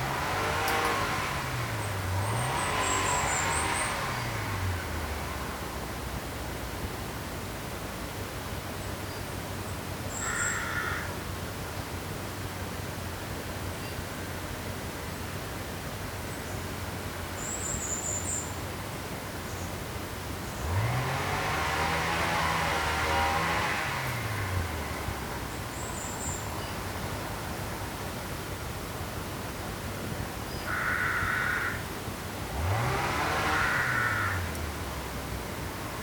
Lough gur, Co. Limerick, Ireland - Wedge tomb
This type of tomb is from the late Neolithic to Early Bronze Age, 2500 - 2000 BCE. Today, there was an awful lot of noise from a strimmer in the nearby garden. You can hear the crows protest against the motor noise.